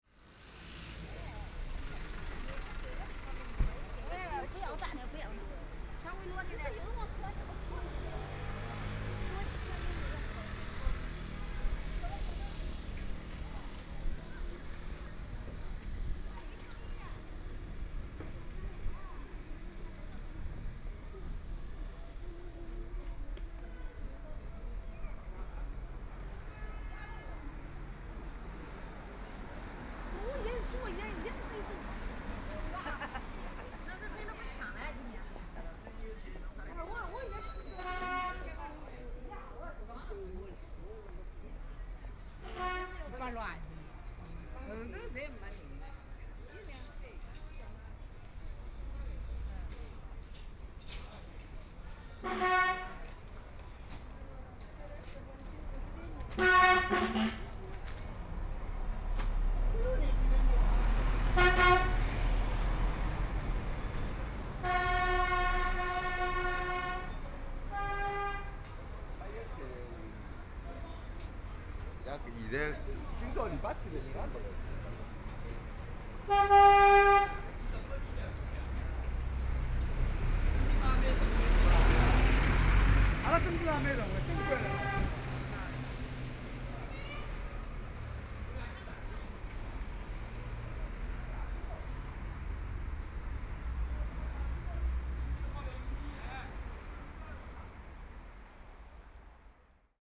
Kuaiji Road, Shanghai - In the Street
Walking in the small streets, Binaural recordings, Zoom H6+ Soundman OKM II
Shanghai, China